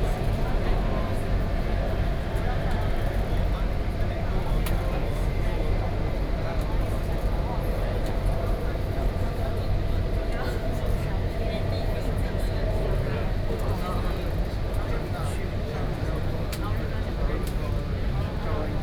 Sanmin, Kaohsiung - inside the Trains
inside the MRT train, Sony PCM D50 + Soundman OKM II